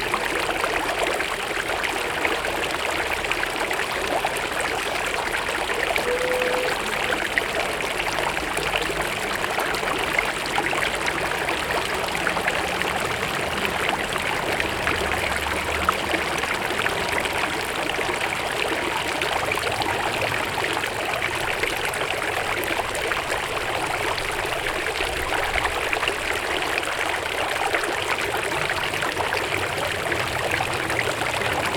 Orléans, fontaine Belmondo
Fontaine femme nue sculptée par lartiste Belmondo, Rue Royale, Orléans (45 - France)
Orléans, France, 16 May 2011